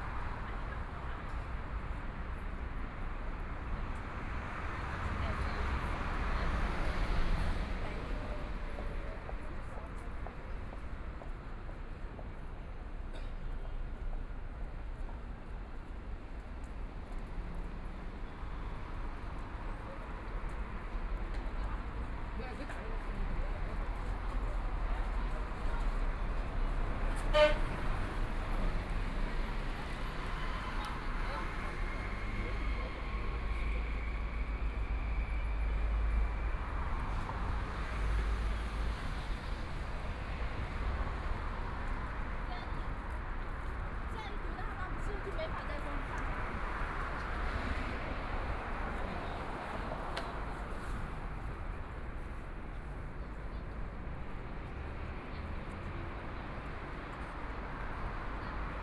Pudong South Road, Pudong New Area - walk
Noon time, in the Street, Footsteps, Traffic Sound, Rest time, Street crowd eating out, Binaural recording, Zoom H6+ Soundman OKM II